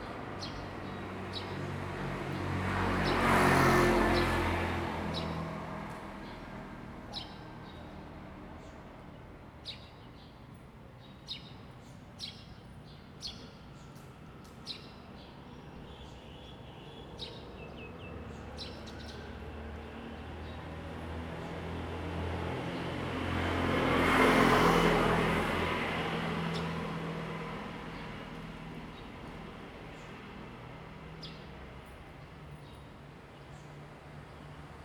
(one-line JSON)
{"title": "Wenchang Rd., Taitung City - Birds singing", "date": "2014-09-08 06:40:00", "description": "Birds singing, Traffic Sound, Morning streets\nZoom H2n MS+XY", "latitude": "22.79", "longitude": "121.13", "altitude": "44", "timezone": "Asia/Taipei"}